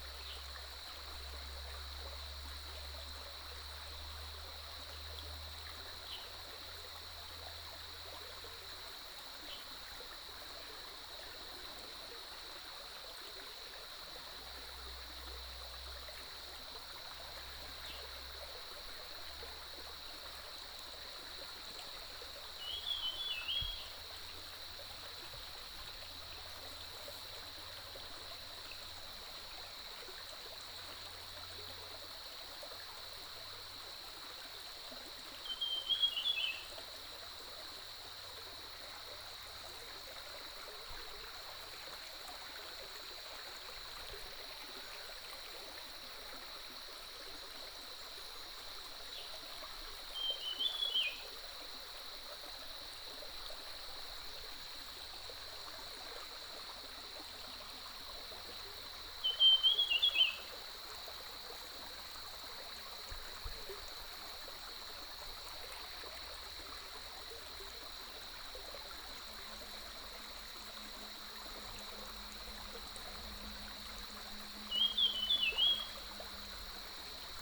Bird calls, The sound of water streams
Puli Township, Nantou County, Taiwan